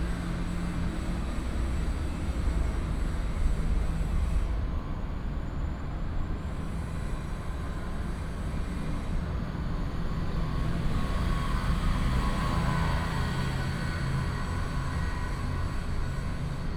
Factories and highways sound, Traffic sound, Binaural recordings, Sony PCM D100+ Soundman OKM II